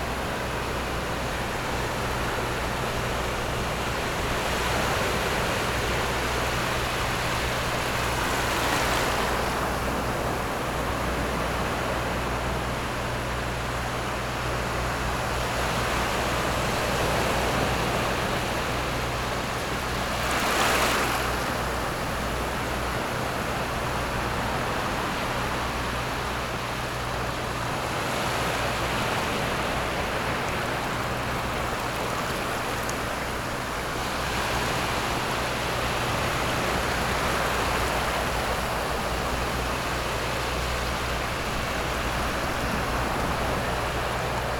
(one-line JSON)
{"title": "頭城鎮外澳里, Yilan County - In the beach", "date": "2014-07-29 15:05:00", "description": "In the beach, There are boats on the distant sea, Hot weather, sound of the waves\nZoom H6 MS+ Rode NT4", "latitude": "24.89", "longitude": "121.85", "timezone": "Asia/Taipei"}